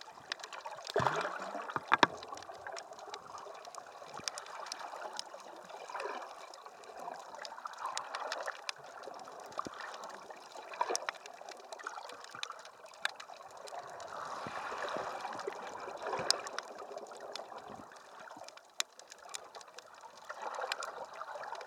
Contact mic attached to a bottle, about 1m submerged, throwing pebbles into the water. Mono recording.
[Hi-MD-recorder Sony MZ-NH900, contact mic by Simon Bauer]
Costarainera IM, Italy, 28 August 2015